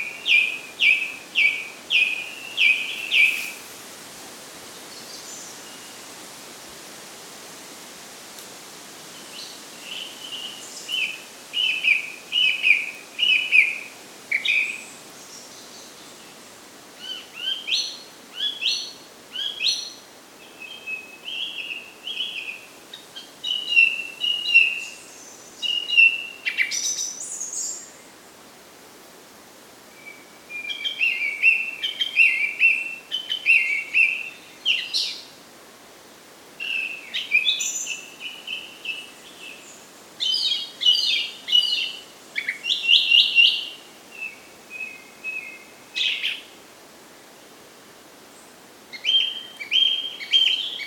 This is a Stereo Recording of Birds having a chat in a Funeral Forest directly at the Baltic Sea. Recorded with a Zoom H6 and MS capsule
Schleswig-Holstein, Deutschland